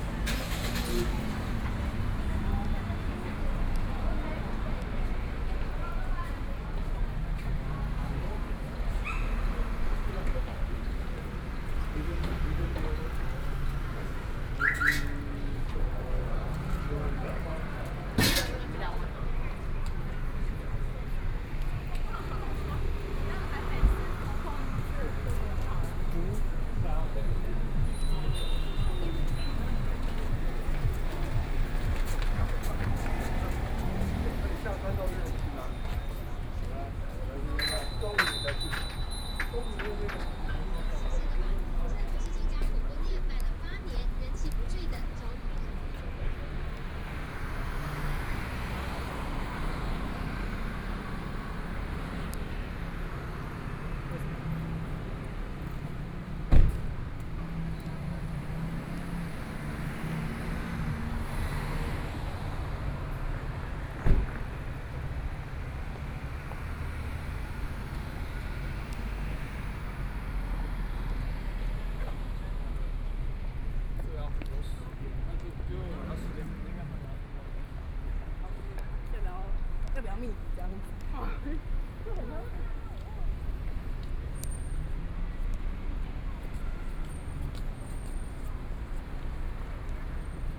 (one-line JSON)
{"title": "Dazhi St., Zhongshan Dist. - walking in the Street", "date": "2014-03-15 18:11:00", "description": "walking in the Street, Traffic Sound\nBinaural recordings", "latitude": "25.08", "longitude": "121.55", "timezone": "Asia/Taipei"}